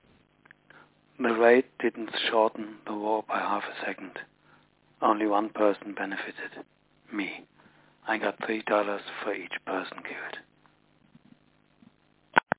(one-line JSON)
{"title": "Kurt Vonnegut R.I.P. - Vonnegut on Slaughterhouse-Five", "description": "Kurt Vonnegut R.I.P.", "latitude": "51.05", "longitude": "13.73", "altitude": "115", "timezone": "GMT+1"}